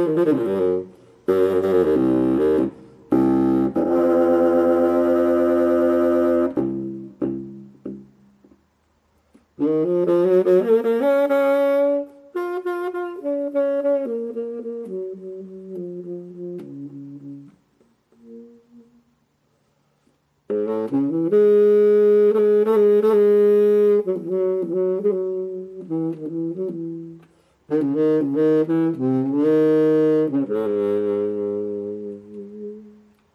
Dirk Raulf bass sax solo
open air performance during gallery opening